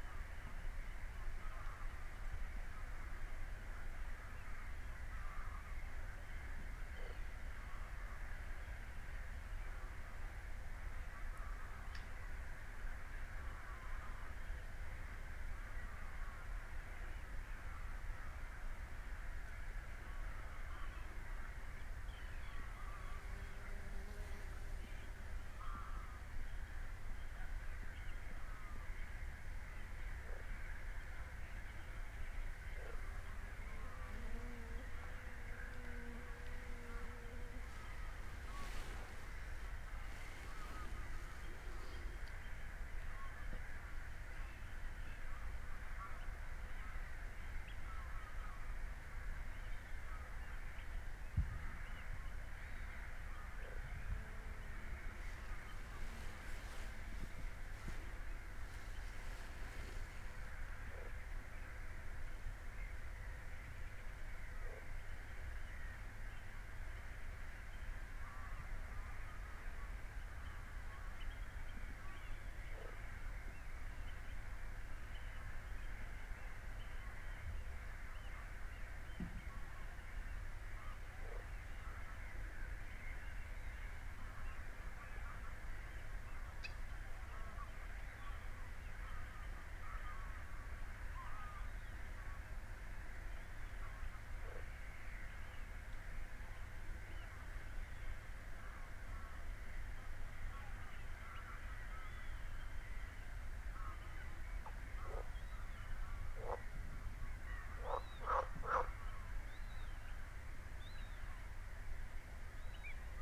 workum: suderseleane - the city, the country & me: birds, frogs, insects
birds of the nearby bird sanctuary, frogs, insects, me
the city, the county & me: june 12, 2014
Workum, The Netherlands, 12 June 2014, 23:45